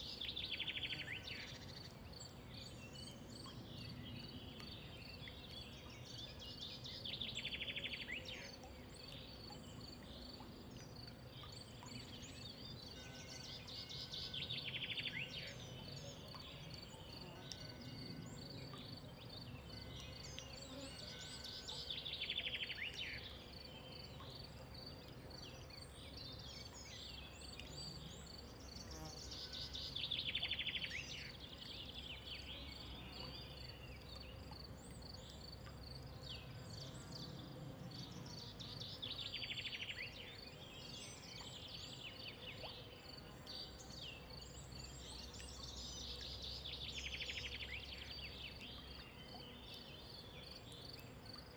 {"title": "Buchenberg, Deutschland - Morgenstimmung, Waldlichtung", "date": "2005-06-29 08:15:00", "description": "Gesumme, Vögel, tropfender Brunnen, Linienflugzeug, I.H. Gebimmel von Kuhglocken.", "latitude": "47.73", "longitude": "10.15", "altitude": "949", "timezone": "Europe/Berlin"}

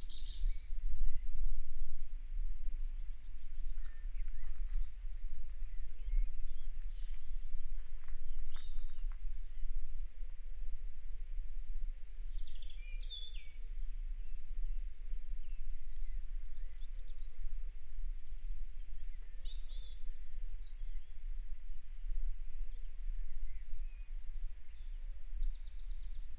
{"title": "Dartmoor: Haytor lower Car Park 8.30am - Haytor lower Car Park 8.30am", "date": "2011-03-23 11:57:00", "description": "recorded at Haytor lower Car Park at 8.30am waiting for other participants to show p.", "latitude": "50.58", "longitude": "-3.75", "altitude": "334", "timezone": "Europe/London"}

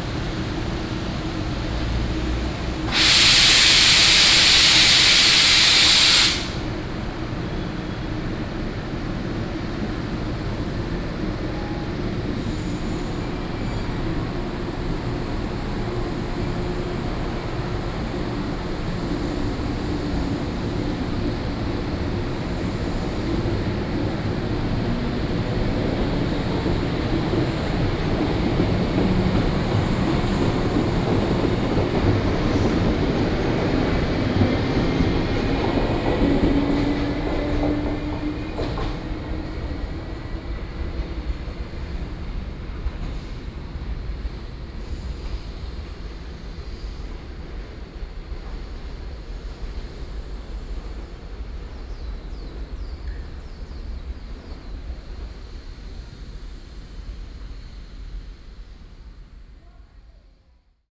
{"title": "Ln., Jixiang St., Shulin Dist., New Taipei City - Train arrival and departure", "date": "2012-06-20 09:20:00", "description": "Train arrival and departure, Near rail station, Train traveling through\nSony PCM D50+ Soundman OKM II", "latitude": "24.97", "longitude": "121.39", "altitude": "40", "timezone": "Asia/Taipei"}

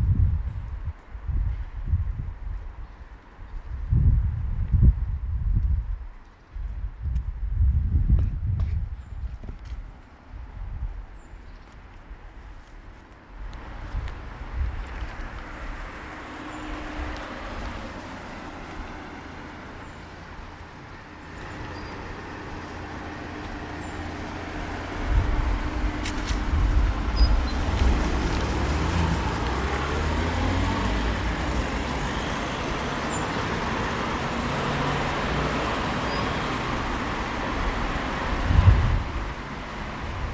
{"title": "kolpingstraße, Mannheim - Kasimir Malewitsch walk eight red rectangles", "date": "2017-07-31 14:44:00", "description": "gymnastics mother child, emergency", "latitude": "49.49", "longitude": "8.48", "altitude": "103", "timezone": "Europe/Berlin"}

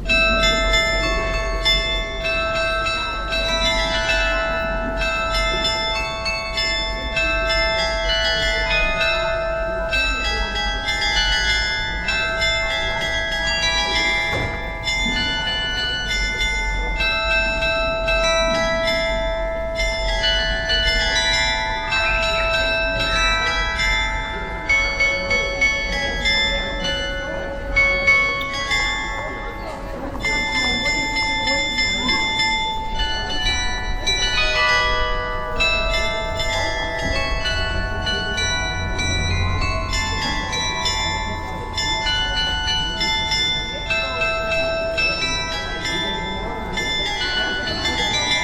{"title": "High Noon - heima®t geislingen high noon", "date": "2008-09-17 12:00:00", "description": "Das Glockenspiel am Alten Rathaus erklingt jeden Tag zur gleichen Zeit, nämlich um 10.00 Uhr, 12 Uhr, 12.30 Uhr, 15.00 Uhr, 17.00 Uhr, 18.00 Uhr und 21.00 Uhr.\nDie Melodien allgemein bekannter Volkslieder sind von der Jahreszeit abhängig und werden variiert.\nIn der Adventszeit bis zum Feiertag Heilige Drei Könige werden adventliche und weihnachtliche Lieder gespielt.", "latitude": "48.61", "longitude": "9.84", "altitude": "467", "timezone": "Europe/Berlin"}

